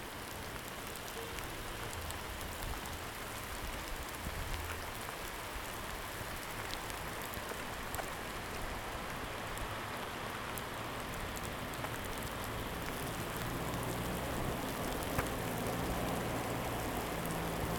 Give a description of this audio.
Getting caught by the rain while walking from the school to the hotel. The rain came in, remained and kept going. Zoom H2n, Stereo Headset Primo 172